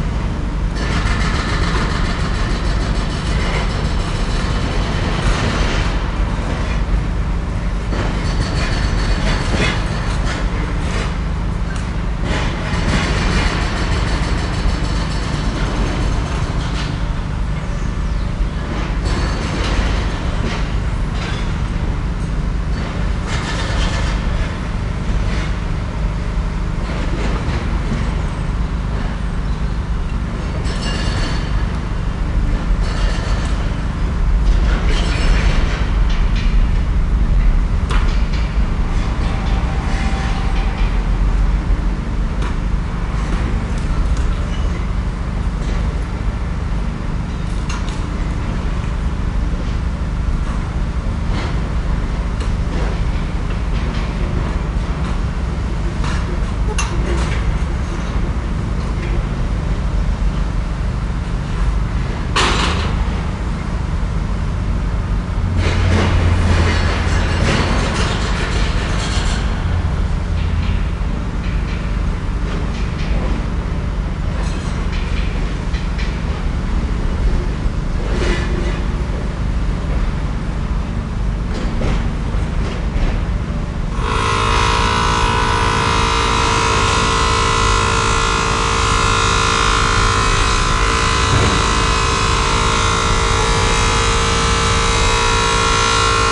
Oliphant St, Poplar, London, UK - RHG #2.1
Recorded with a pair of DPA 4060s and a Marantz PMD661.